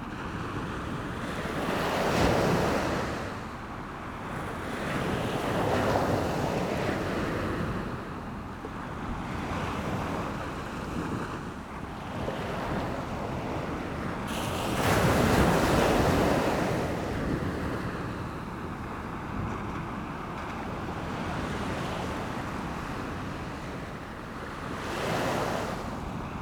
Amble, Morpeth, UK - Falling tide ... Amble ...
Falling tide ... Amble ... lavalier mics on T bar fastened to mini tripod ... bird calls from passing black-headed gulls ...
21 September 2017, 06:00